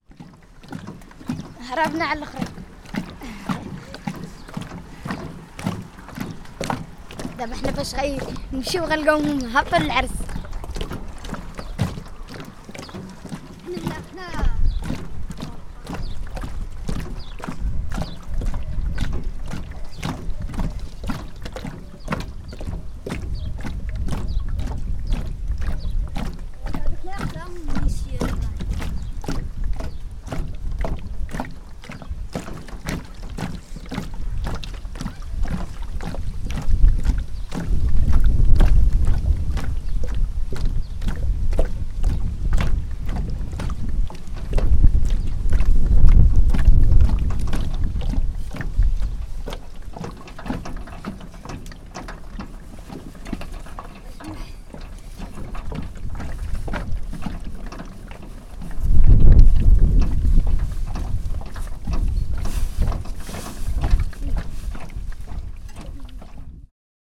Laâssilat, Maroc - Bidons deau sur une charrette
Le son de l'eau qui va et vient dans un bidon. Cette eau était à l'arrière d'une charrette.
Son enregistré par Kais Ayman et Mohamed.
Sawt dyl lma kimchi w yji f bota dyl lma kbira. Had lbota kant fwahd l araba.
Sawt khdah Kais, Ayman w Mohamed
cercle de Bouskoura, Province Nouaceur إقليم النواصر, Casablanca-Settat ⵜⵉⴳⵎⵉ ⵜⵓⵎⵍⵉⵍⵜ-ⵙⵟⵟⴰⵜ الدار البيضاء-سطات, 7 March 2021, ~13:00